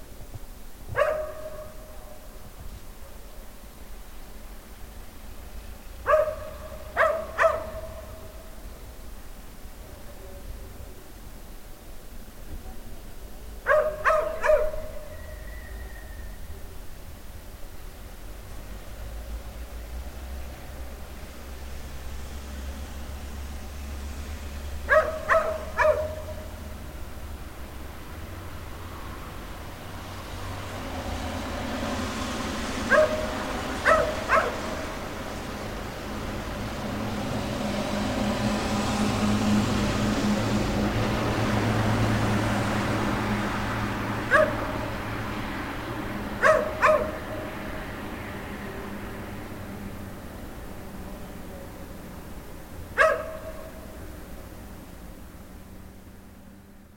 Marrakech: Stray dog at night/ Streunender Hund in der Nacht
Marrakesh, Morocco